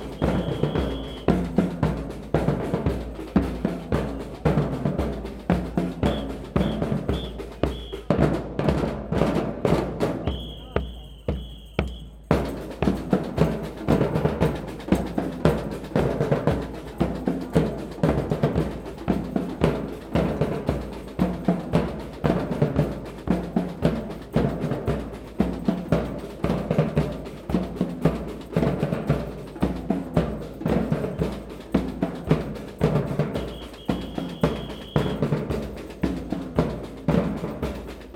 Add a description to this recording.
Gay pride parade passes through the city, with drums and whistles.